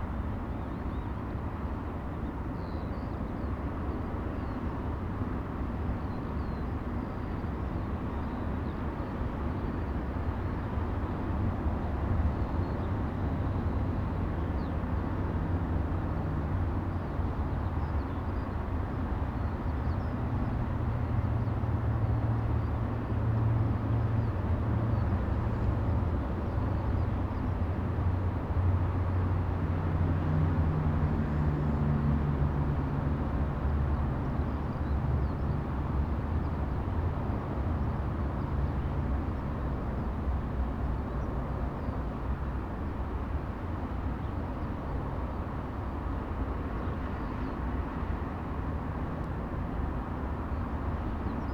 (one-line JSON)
{"title": "berlin, tempelhofer feld: rollweg nahe a - the city, the country & me: taxiway close to freeway a 100", "date": "2010-05-08 16:35:00", "description": "taxiway close to suburban railway and freeway a 100, police helicopter monitoring the area, sound of the freeway, suburban train passes by\nthe city, the country & me: may 8, 2010", "latitude": "52.47", "longitude": "13.39", "altitude": "51", "timezone": "Europe/Berlin"}